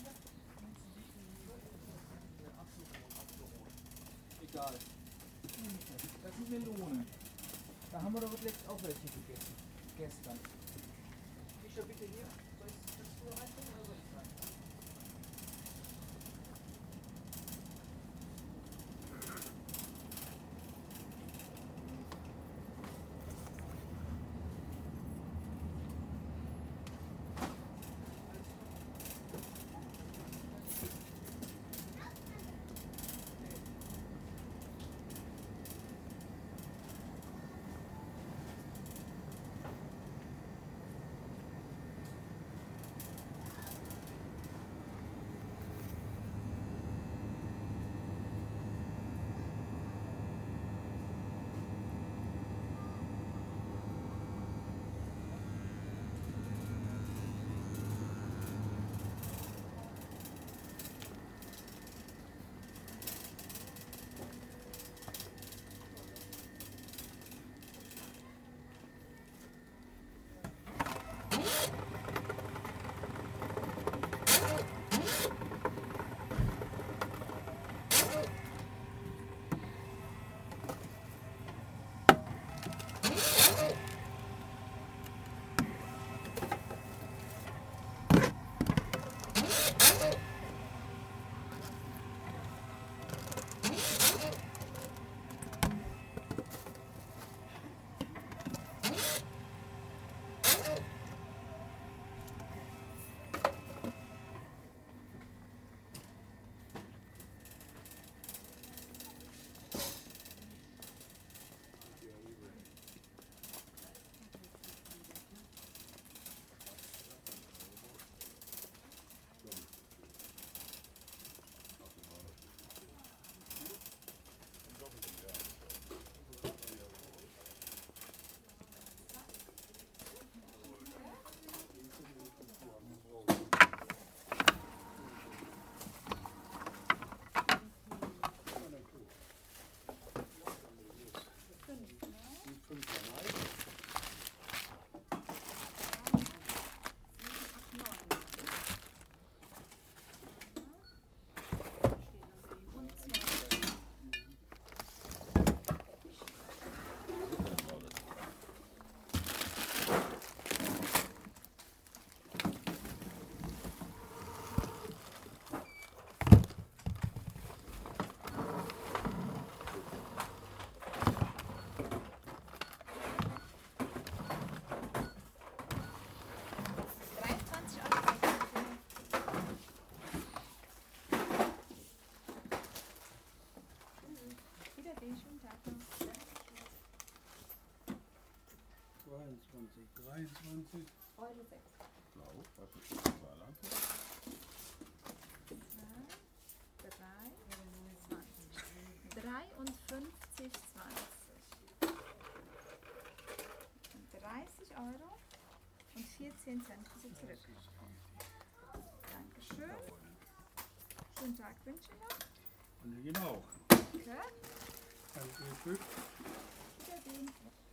Ruppichteroth, inside super market
recorded june 25th, 2008, around 10 p. m.
project: "hasenbrot - a private sound diary"
Ruppichteroth, Germany